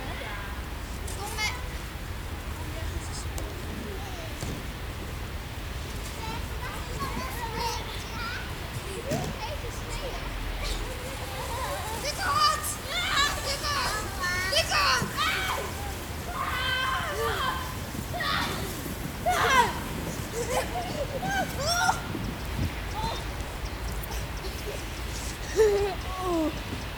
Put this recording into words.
Kids playing in the snow, mainly sledding. Kinderen spelen in de sneeuw, voornamelijk met sleeën. Internal mics Zoom H2